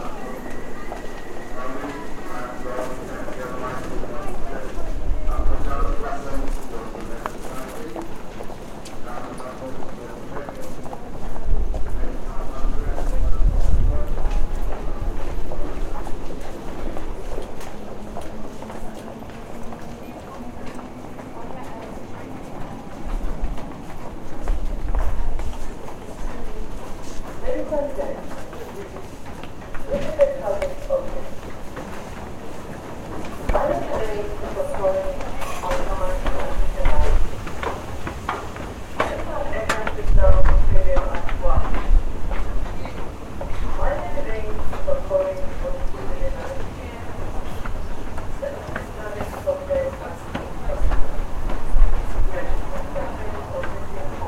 Travelling on London Underground train from Pimlico to Vauxhall Station